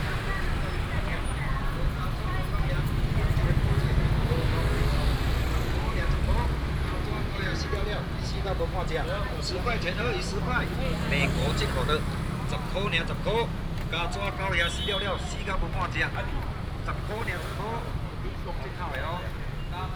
Walking in the traditional market, vendors peddling, traffic sound, Brake sound, Binaural recordings, Sony PCM D100+ Soundman OKM II
Taichung City, Dongshi District, 本街244號